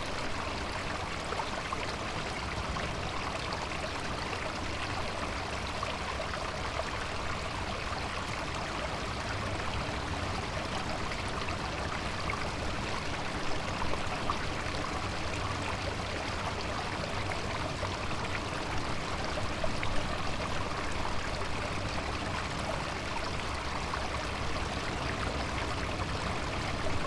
Cleveland Way, Whitby, UK - water flowing from a culvert ...
water flowing from a culvert ... SASS ... background noise ...